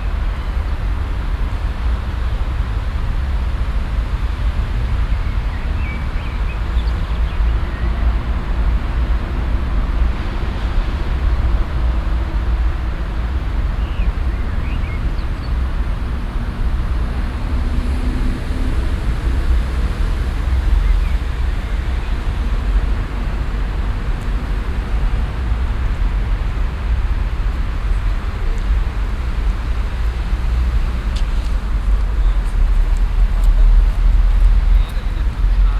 cologne, ubiering, strassenbahnwendehammer
soundmap: cologne/ nrw
wendehammer der strassenbahnen, verkehr der rheinuferstrasse, passanten
project: social ambiences/ listen to the people - in & outdoor nearfield recordings